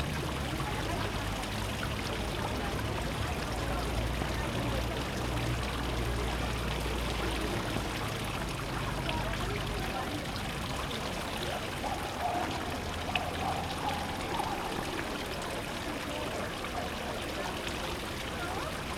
wiesbaden, kochbrunnenplatz: fountain - the city, the country & me: fountain
the city, the country & me: may 6, 2016